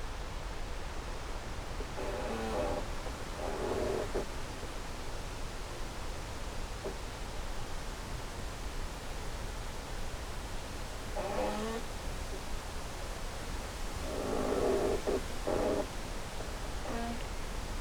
{"title": "Fen Covert, UK - Ancient wetland wood in a gale; inside and outside a creaky tree", "date": "2020-07-05 16:42:00", "description": "A snippet from several days of gales. Fen covert is a very atmospheric old wetland wood, left untouched for decades. The birches and alders have fallen, slanted, grown and died into fantastic mossy shapes and sculptures. A dead tree, cracked but still upright leans on another. They move together in the wind. The creak is faintly audible to the ear amongst the hiss and swell of leaves and branches, but very loud and close to the contact mic placed in the trunk. This track is a mix of the outer and inner sounds in sync.", "latitude": "52.30", "longitude": "1.60", "altitude": "10", "timezone": "Europe/London"}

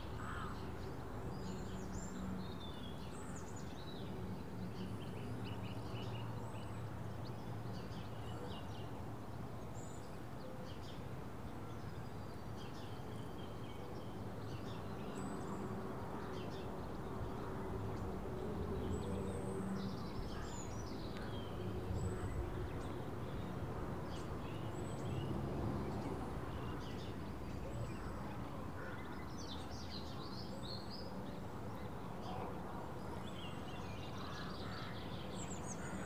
{"title": "Slingsby, North Yorkshire, UK - Morning birds & traffic", "date": "2015-03-08 08:18:00", "description": "Morning birdsong and traffic in a peaceful village. THere's a panorama of birdsong but it's dominated by the crows.\nRecorded on Zoom H4n internal mics.", "latitude": "54.17", "longitude": "-0.93", "altitude": "29", "timezone": "Europe/London"}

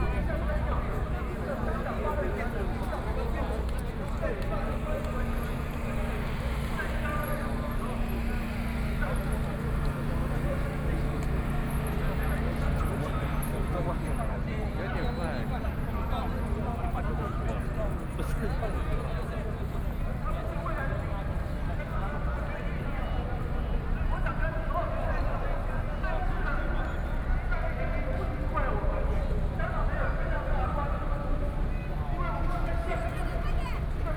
{"title": "中正區梅花里, Taipei City - occupied", "date": "2014-03-23 10:01:00", "description": "Student activism, Walking through the site in protest, People and students occupied the Executive Yuan", "latitude": "25.05", "longitude": "121.52", "altitude": "10", "timezone": "Asia/Taipei"}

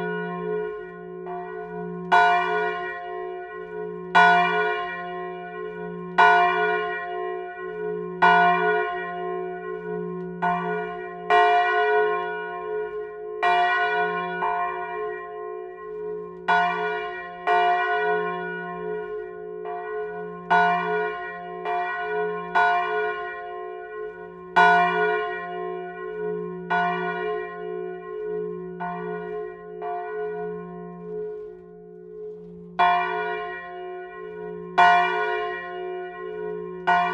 {"title": "Rte de l'Église Saint-Martin, Montabard, France - Montabard - Église St-Martin", "date": "2020-09-20 10:30:00", "description": "Montabard (Orne)\nÉglise St-Martin\nLa Volée", "latitude": "48.81", "longitude": "-0.08", "altitude": "238", "timezone": "Europe/Paris"}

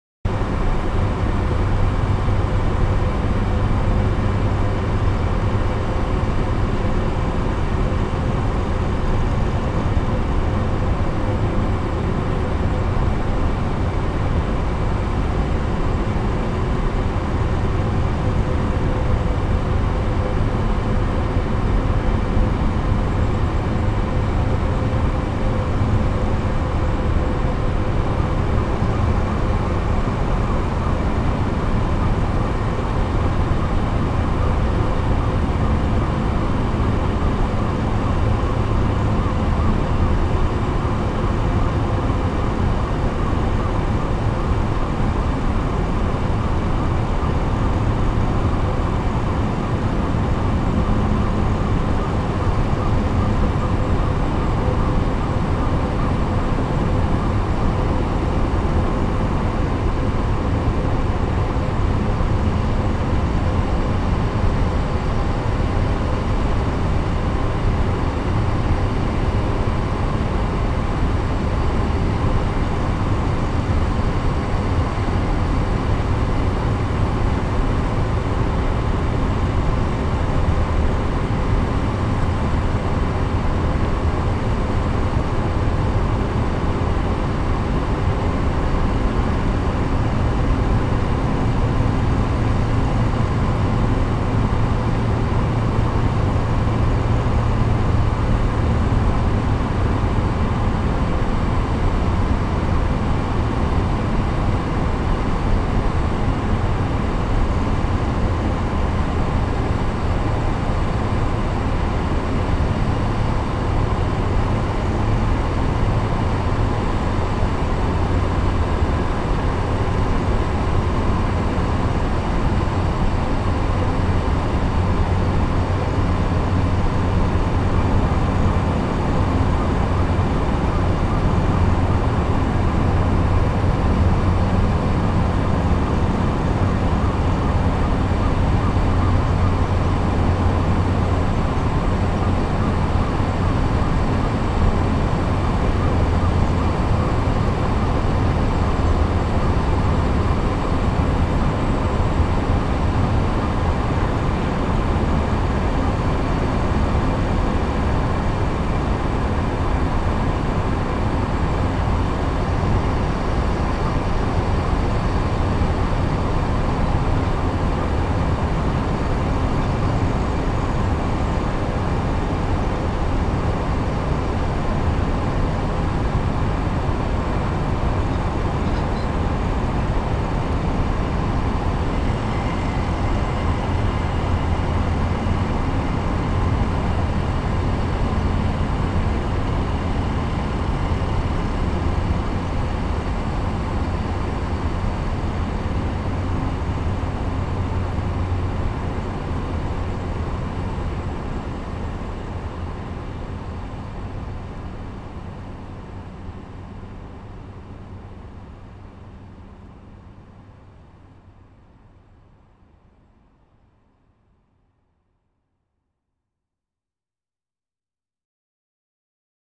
{"title": "Osek, Czech Republic - Bilina Pit", "date": "2015-09-12 16:00:00", "description": "Recorded on the edge of a big hole of Bilina Pit. This is the constant sound of machines from the coalmine. I hold my mics approximately one meter above the ground and also directly onto earth. The distance from the nearest machine was about 50 meters. Recording 4pm 12/09/2015.", "latitude": "50.58", "longitude": "13.70", "altitude": "270", "timezone": "Europe/Prague"}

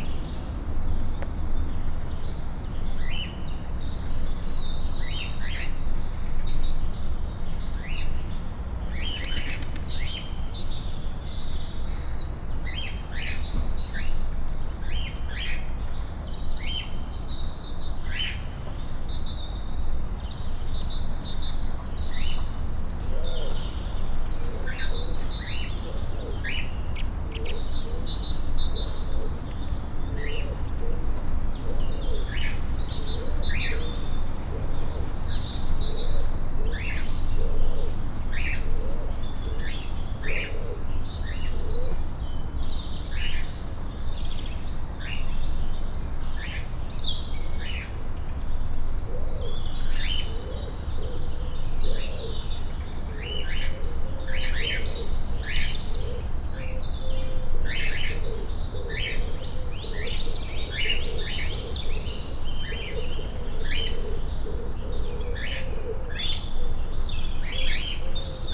{"title": "Brussels, Rue du Puits", "date": "2012-01-10 13:04:00", "description": "Kinsendael, Plateau England, henhouse.\nSD-702, Me64, NOS", "latitude": "50.79", "longitude": "4.34", "altitude": "57", "timezone": "Europe/Brussels"}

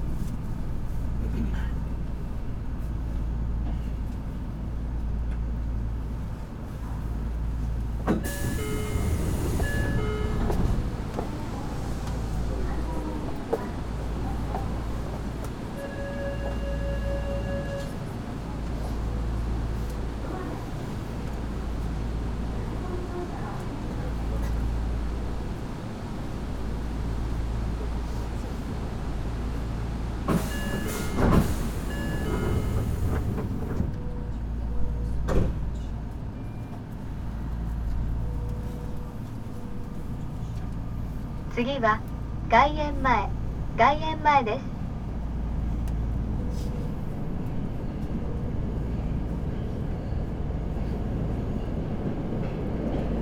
{"title": "tokyo metro - crowded tokyo trains and their silence", "date": "2013-11-18 18:27:00", "description": "train full of silent people", "latitude": "35.67", "longitude": "139.74", "timezone": "Asia/Tokyo"}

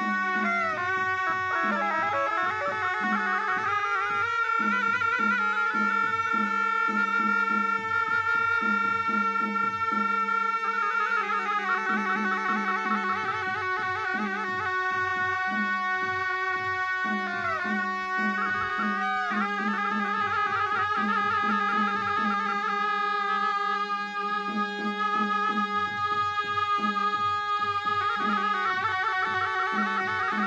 {"title": "4GGG+6G Leh - Leh - Ladak - Inde", "date": "2008-05-18 17:00:00", "description": "Leh - Ladak - Inde\nMonastère Spitukh Gonpah - avec une vue imprenable sur l'aéroport de Leh.\nAmbiance et cérémonie\nFostex FR2 + AudioTechnica AT825", "latitude": "34.13", "longitude": "77.53", "altitude": "3239", "timezone": "Asia/Kolkata"}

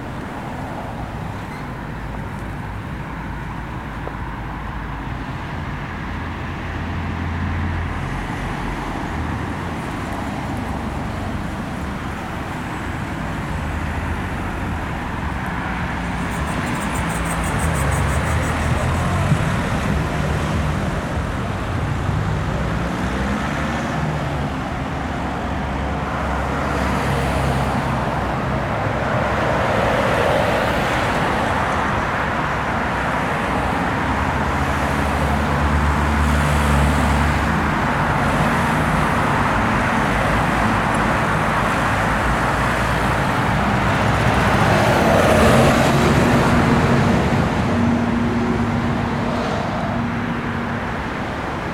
{"title": "Avenue de la Gare, Goussainville, France - Site 3. Le Calvaire. Entrée du village. 1", "date": "2018-06-01 15:31:00", "description": "Ateliers Parcours commente Ambiances Avec les habitants de Goussainville le Vieux Village. Hyacinthe s'Imagine. Topoï. Alexia Sellaoui Segal, Ingenieur du son", "latitude": "49.02", "longitude": "2.47", "altitude": "68", "timezone": "Europe/Paris"}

